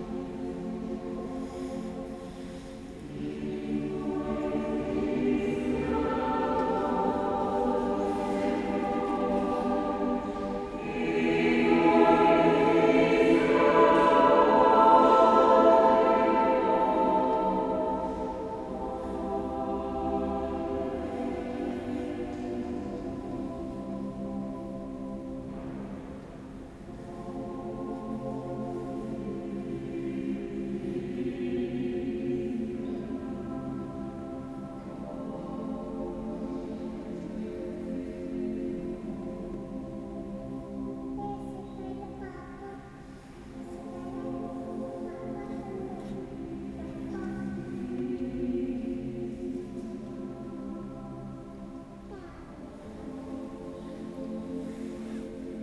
Mechelen, Belgique - Mass
The mass in the OLV-over-de-Dijlekerk. In first, the priest speaking. After, people praying and at the end, beautiful songs of the assembly. During the vocal, the offertory : people opening the wallets and a lot of squeaking of the old benches.
21 October 2018, 11:00